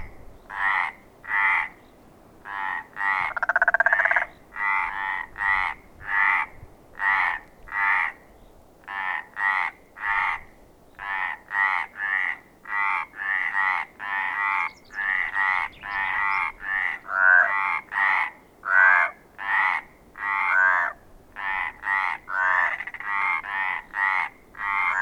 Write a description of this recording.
On this evening, frogs are becoming crazy. It makes so much noise ! How can we live with these animals ?!